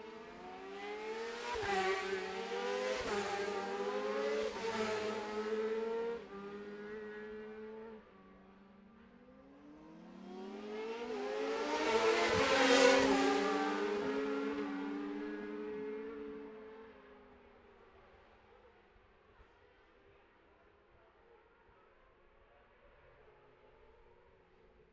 bob smith spring cup ... `600cc heat 2 race ... dpa 4060s to MixPre3 ...
22 May 2021, 14:26